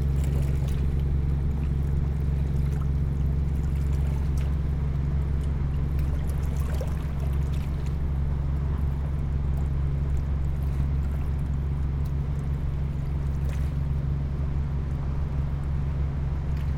La Grande-Paroisse, France - Boats on the Seine river
Two boats passing by on the Seine river. The second one is called "L'inattendu". It means "the unexpected".
28 December 2016, 08:55